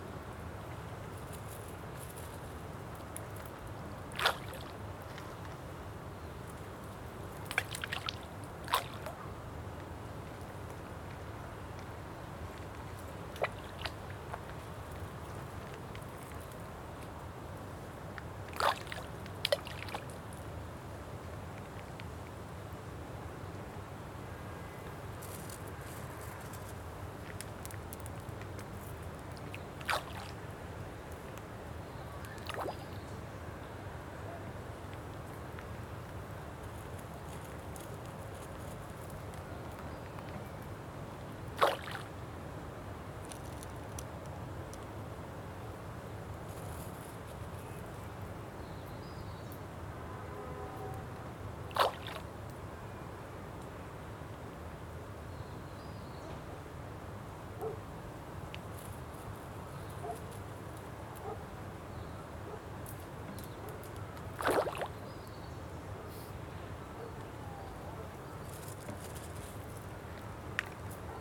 Botanical Garden Jerusalem
Water, Highway in distance, Dog barking in distance.